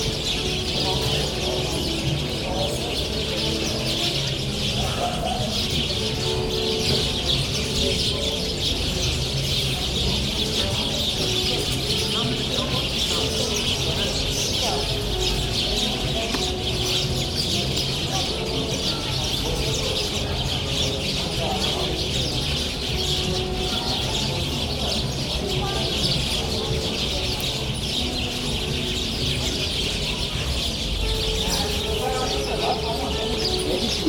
Gyumri, Arménie - Sparrows
In the main pedestrian road of Gyumri, a tree has one thousand sparrows. On the evening, it makes a lot of noise !